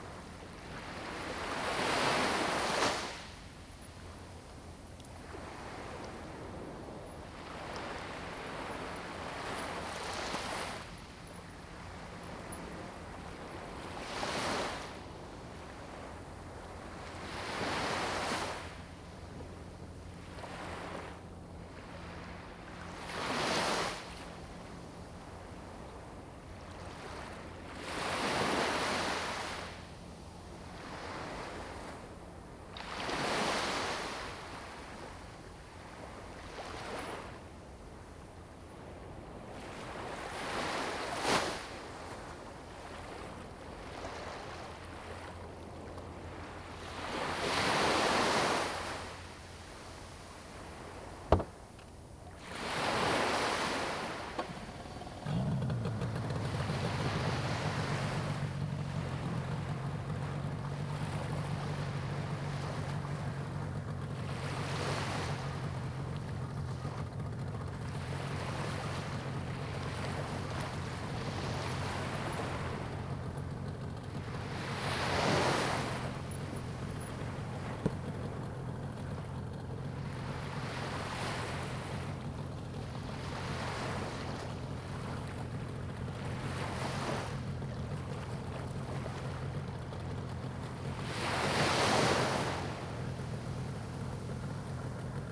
隘門沙灘, Huxi Township - In the beach
In the beach, Sound of the waves
Zoom H2n MS +XY